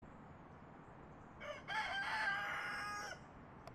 rooster Barney -Mountain blvd. Oakland - Mountain blvd. Oakland
rooster Barney and his wake-up call as a part.1. from series of recordings of three weeks residence on Mountain blvd. *** updated daily